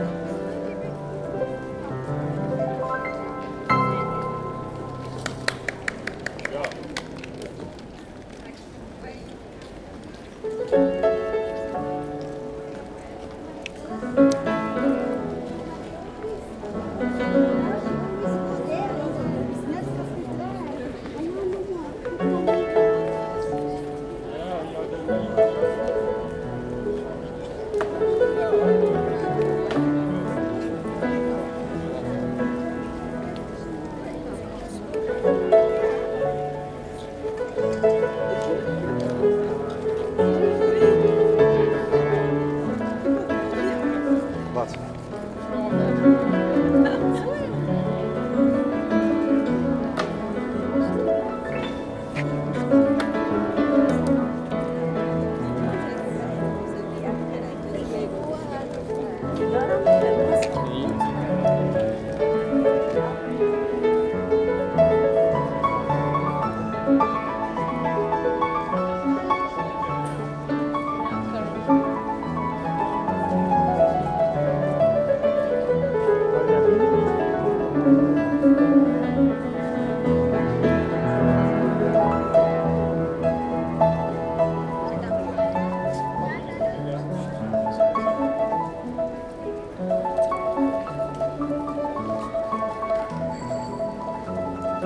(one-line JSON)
{"title": "Antwerpen, Belgique - The Meir street ambiance, piano player", "date": "2018-08-04 13:00:00", "description": "Into the commercial street called Meir, on a colorful saturday afternoon, people walking quietly. A piano player, called Toby Jacobs. He's speaking to people while playing !", "latitude": "51.22", "longitude": "4.41", "altitude": "10", "timezone": "GMT+1"}